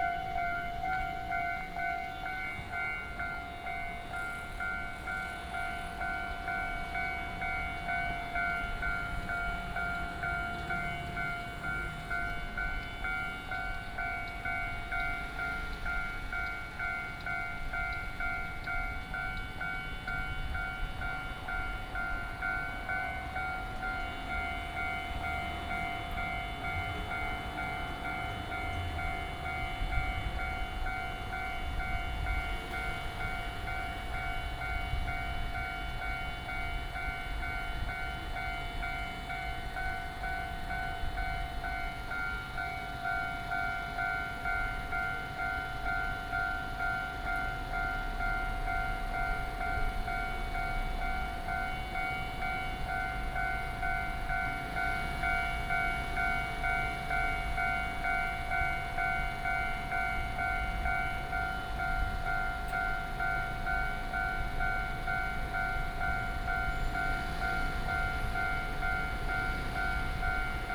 礁溪鄉大義村, Yilan County - Beside the railway crossing
Beside the railway crossing, A train traveling through, Very hot weather, Traffic Sound